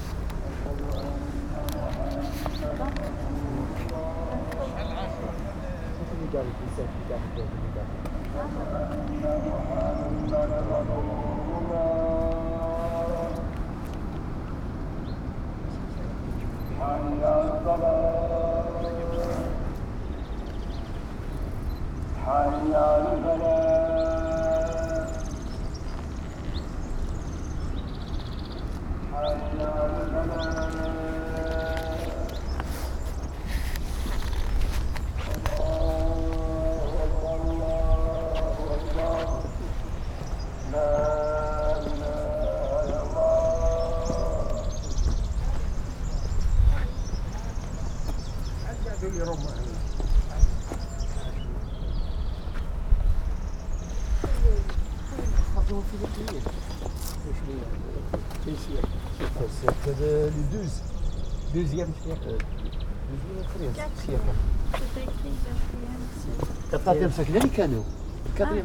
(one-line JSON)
{"date": "2011-03-20 14:50:00", "description": "Tipaza, Algeria, Roman ruins.\nLes ruines romaines de Tipasa.", "latitude": "36.59", "longitude": "2.44", "altitude": "18", "timezone": "Africa/Algiers"}